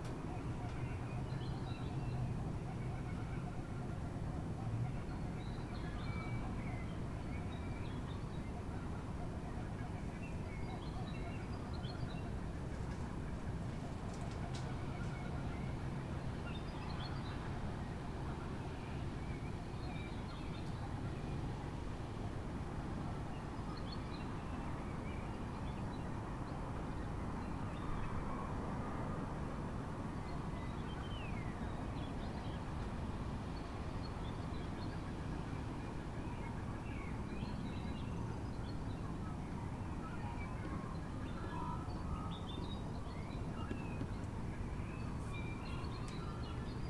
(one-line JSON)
{"title": "Bretwalder Ave, Leabrook SA, Australia - Soundscape before dawn", "date": "2017-11-23 05:10:00", "description": "Recording from 5:10 am (fifty minutes before sunrise). Within the general distant traffic ambience, you can hear, in early part of the recording, after a distant dog barking, in the foreground, a tawny frogmouth hooting; later, amongst other birds such as magpies, you can hear distant kookaburras.", "latitude": "-34.93", "longitude": "138.65", "altitude": "98", "timezone": "Australia/Adelaide"}